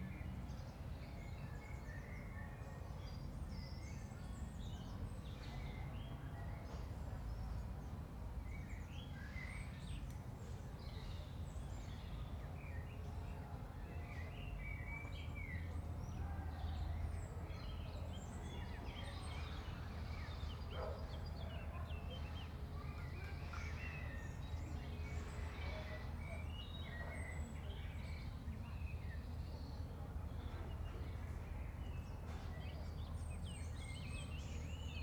Hampstead Parish Church Churchyard, Hampstead, London - Hampstead Parish Church Churchyard
birds, people chatting, construction site nearby
18°C
5 km/hr 130
Greater London, England, United Kingdom, March 2021